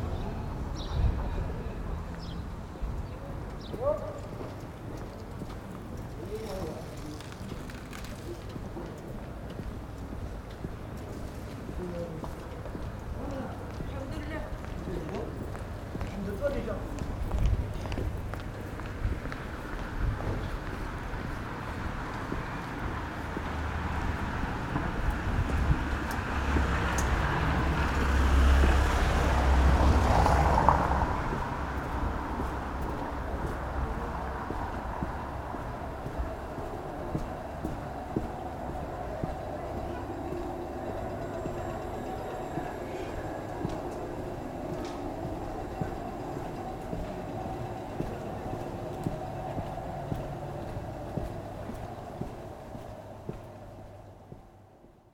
A recording near the waterfront then on place Saint Michel, a sunday in spring its usually crowded, with a flea market and peoples at cafés and restaurants. We can hear the echo of the empty place with the few people talking.
The place where I live, never sounded like that before.
Nouvelle-Aquitaine, France métropolitaine, France, 29 March, 12:30